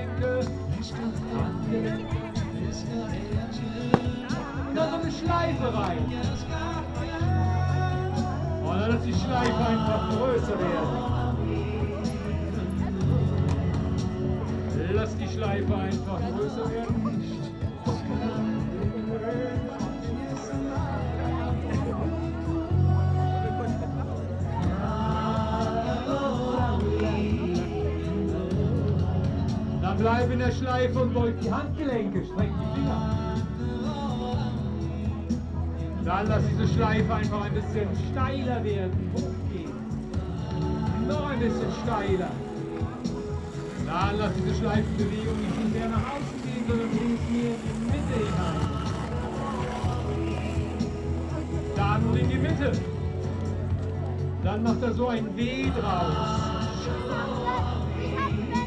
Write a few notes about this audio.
strange yoga dance instructor during the opening of formerly tempelhof airport for public, the city, the country & me: may 8, 2010